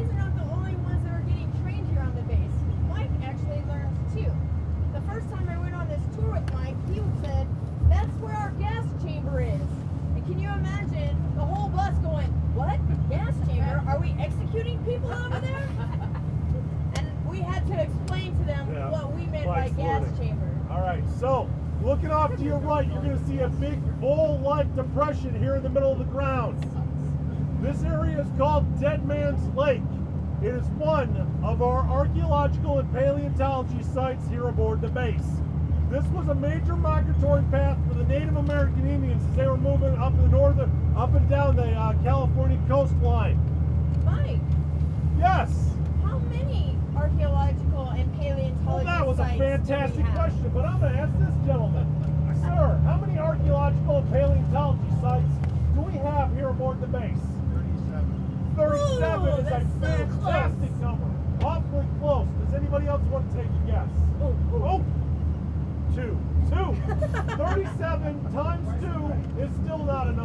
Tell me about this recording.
Yelling tour on the bus, bouncing across the Mojave sand